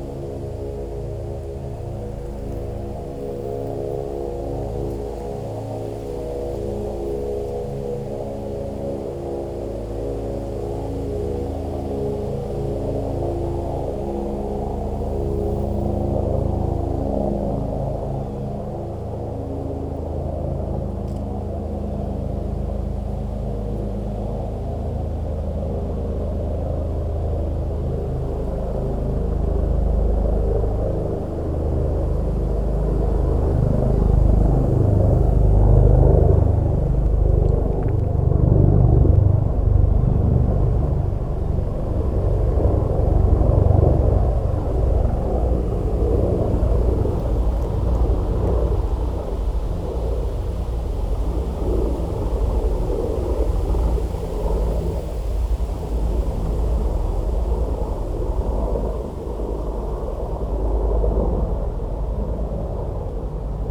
Kings, Subd. B, NS, Canada - Helicopter resonating the hills and blue Jays
This helicopter flew over several time while we were here. Sometimes its drone reverberated in the hills. In the quiet between Blue Jays chattered.